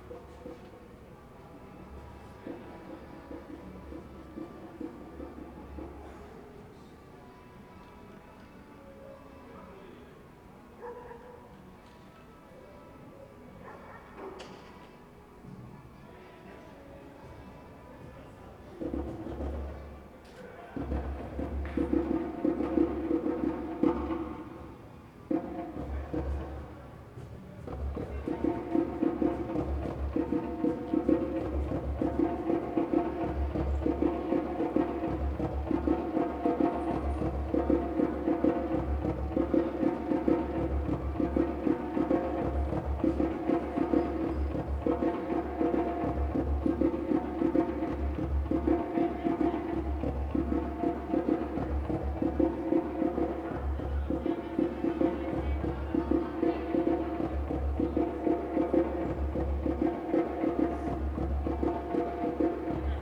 Ascolto il tuo cuore, città. I listen to your heart, city. Several chapters **SCROLL DOWN FOR ALL RECORDINGS** - FlashMob al tempo del COVID19” Soundscape
Friday March 13 2020. Fixed position on an internal terrace at San Salvario district Turin, three days after emergency disposition due to the epidemic of COVID19.
Start at 6:18 p.m. end at 6:48 p.m. duration of recording 30'00''
Piemonte, Italia